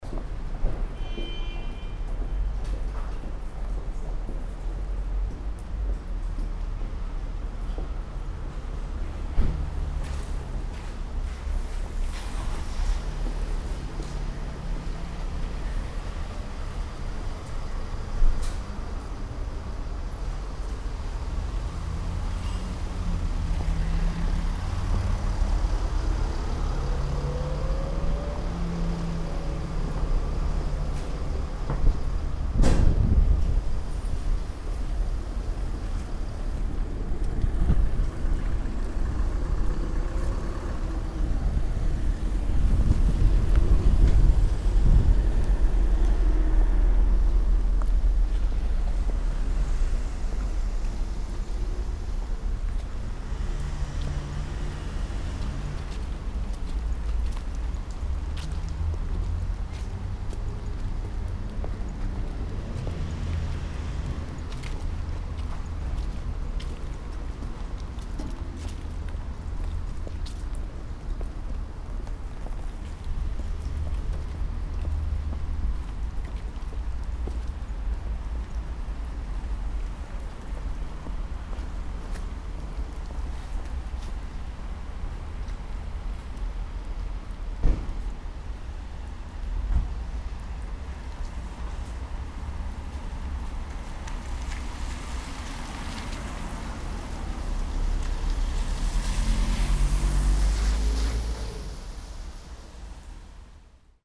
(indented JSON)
{
  "title": "stuttgart, dorotheenstraße",
  "description": "kopfsteinpflaster, nasse strasse, wenig verkehr, parkende fahrzeuge, türenschlagen, mittags\nsoundmap d: social ambiences/ listen to the people - in & outdoor nearfield recordings",
  "latitude": "48.78",
  "longitude": "9.18",
  "altitude": "252",
  "timezone": "GMT+1"
}